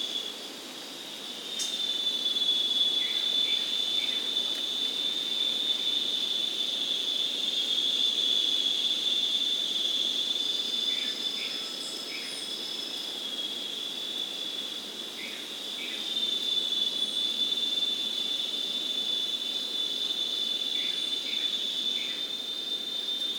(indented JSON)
{
  "title": "Parque da Cantareira - Núcleo do Engordador - Trilha da Cachoeira - v",
  "date": "2016-12-19 11:56:00",
  "description": "register of activity",
  "latitude": "-23.40",
  "longitude": "-46.59",
  "altitude": "886",
  "timezone": "GMT+1"
}